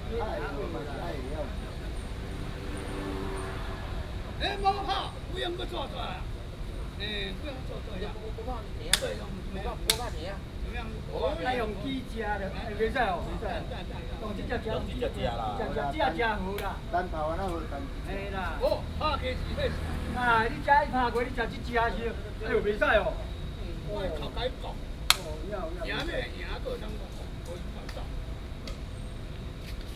Many people play chess, in the Park